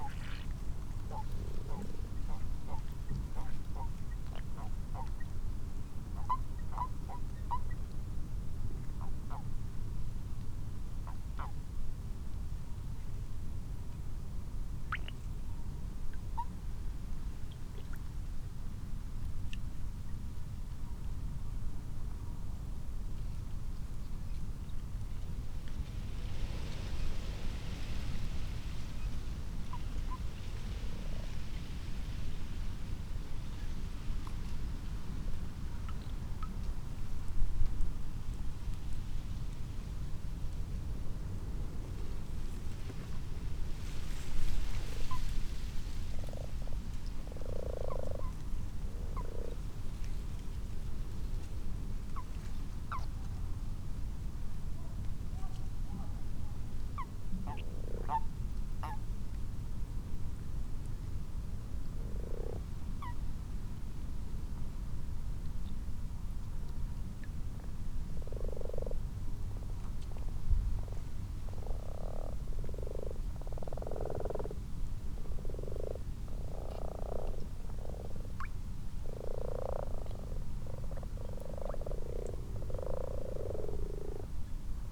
Malton, UK - frogs and toads ...
common frogs and common toads in a garden pond ... xlr sass on tripod to zoom h5 ... time edited extended unattended recording ...